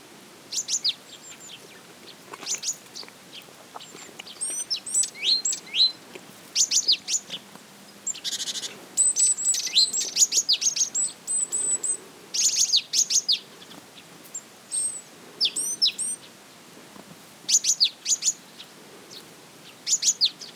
Marmashen Monastery Rd, Vahramaberd, Armenia - Monastery at Marmashen under fresh snowfall
The abandoned monastery at Marmashen, Armenia, under fresh snowfall.